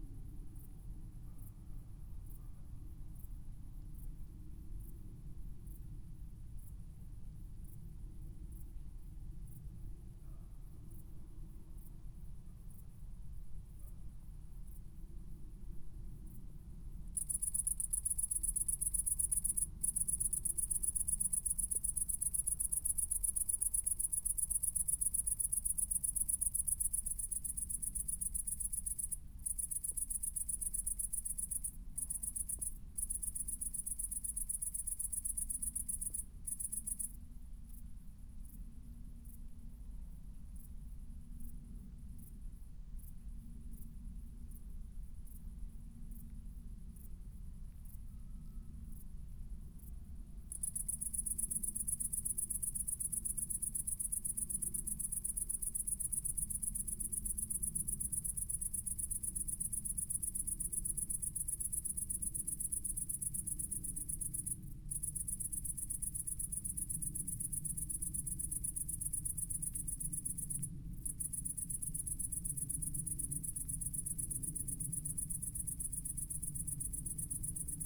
Niedertiefenbach - cricket, aircraft
lonely cricket at night, aircraft crossing. Since some years, aircrafts from/to Frankfurt can be heard all the time due to increased traffic and cheap fares
(Sony PCM D50, Primo EM172)